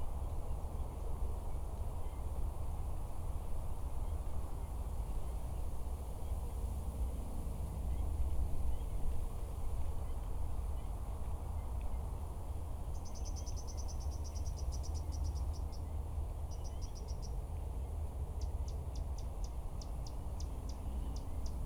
布袋濕地生態園區, Budai Township, Chiayi County - Wetland area
Wetland area, Bird sounds, Wind, Traffic sound
SoundDevice MixPre 6 +RODE NT-SF1 Bin+LR
臺灣省, Taiwan, 9 August, ~16:00